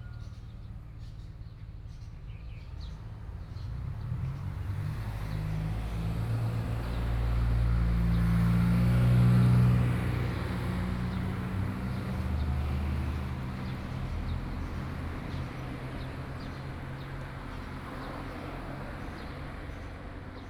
永鎮廟, 壯圍鄉永鎮村 - In front of the temple
In front of the temple, Birdsong sound, Small village, Traffic Sound
Sony PCM D50+ Soundman OKM II